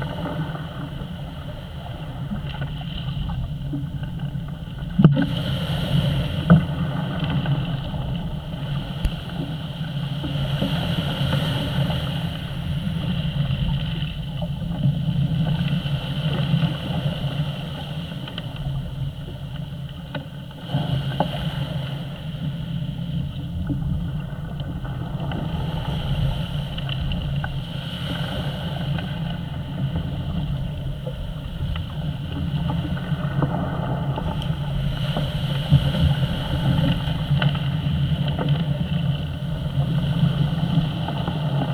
A bolt in a breakwater, Southwold, Suffolk, UK - Bolt
Recorded with a cheap piezo contact mic held against a bolt in a long wooden breakwater.
MixPre 3 with a chinese contact mic costing £2.00